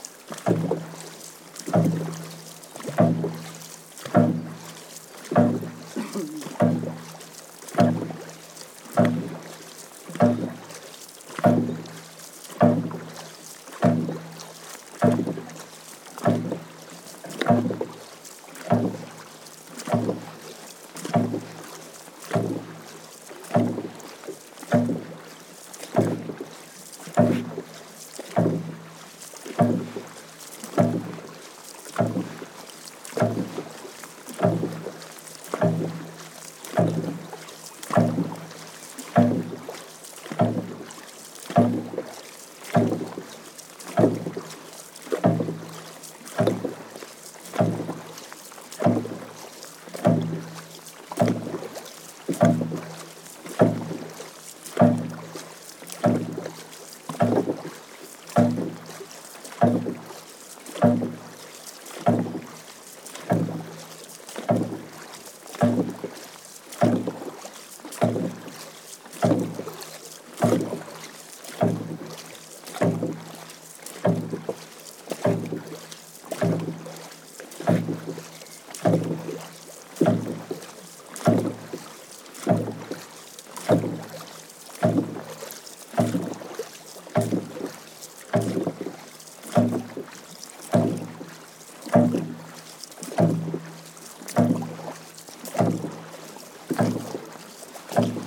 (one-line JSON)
{"title": "Clonmel Rowing Club, Co. Tipperary, Ireland - Suir Dragon Paddlers", "date": "2014-05-31 18:51:00", "description": "As part of the Sounding Lines Art Project we were privileged to be invited to go out paddling with the Suir Dragon Paddlers - CRC is a dragon boat team of breast cancer survivors their friends and families of all ages and abilities, set up in February 2013. Amazing for us to experience the team work and the beautiful sounds and the rhythms created by the paddlers.", "latitude": "52.35", "longitude": "-7.71", "altitude": "23", "timezone": "Europe/Dublin"}